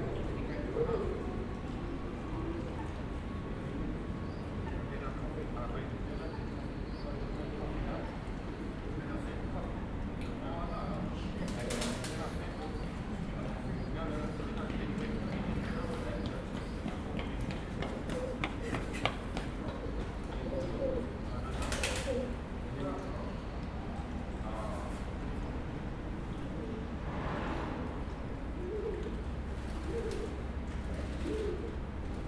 Regent's Canal towpath, underneath the bridge on Royal College Street near Camden, London. The sound of a boat passing, runners, birds, baby pigeons and distant chatting.